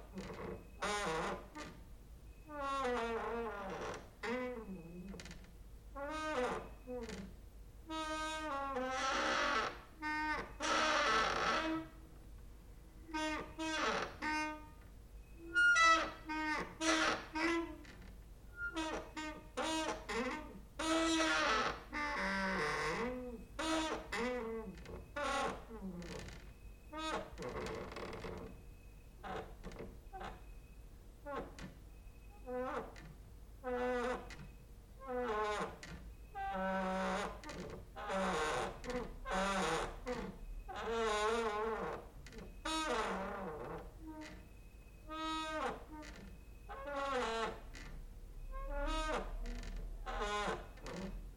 Mladinska, Maribor, Slovenia - late night creaky lullaby for cricket/6
cricket outside, exercising creaking with wooden doors inside